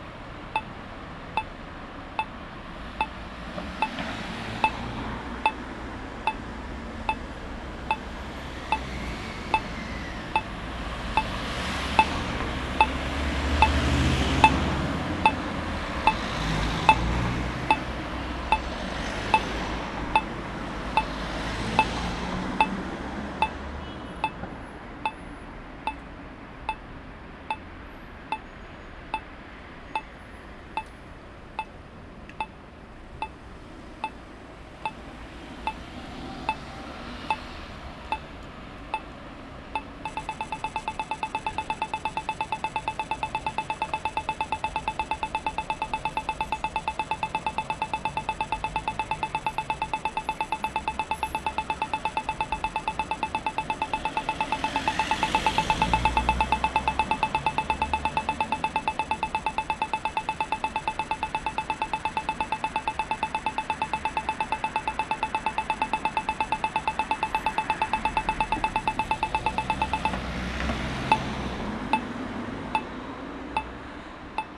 Aalst, België - Red light
A red light regulates the traffic for blind people.
Aalst, Belgium, 23 February 2019, 09:45